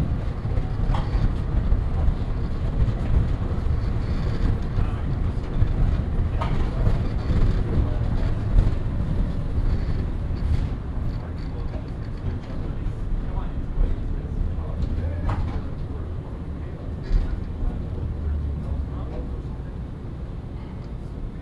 Tram ride, Beograd, Serbia - (332) Shaky tram with surreal announcements
Binaural recording of a really shaky tram ride with unreal speaker announcements
Recorded with Soundman OKM + Iphone7 (with zoom adapter).